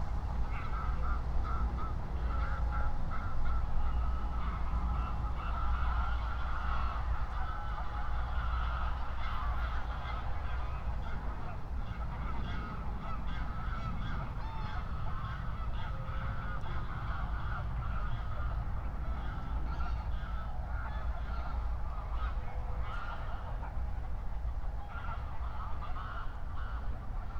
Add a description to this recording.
23:39 Berlin, Buch, Moorlinse - pond, wetland ambience